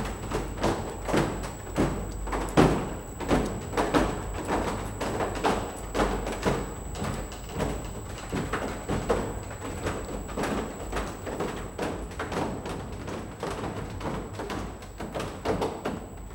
zentraler wasserantrieb der cromford web-
maschinen - langsamer anlauf und betrieb
soundmap nrw
topographic field recordings and social ambiences